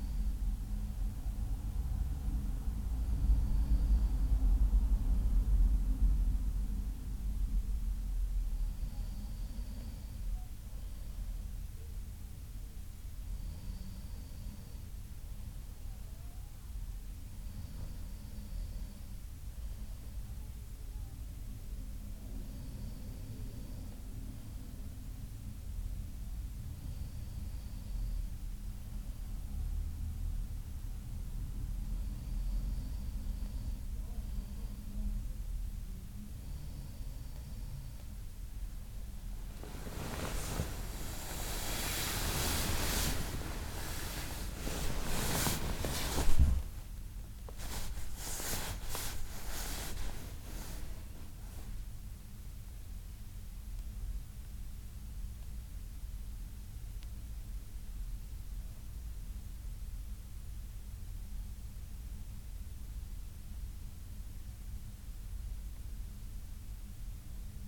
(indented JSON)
{
  "title": "Calea Victoriei, București 010082 romania - yan sleeping",
  "date": "2022-07-02 02:22:00",
  "description": "hotel.\n5 minutes after sleep.\n2 x dpa 6060 mics.",
  "latitude": "44.44",
  "longitude": "26.10",
  "altitude": "89",
  "timezone": "Europe/Bucharest"
}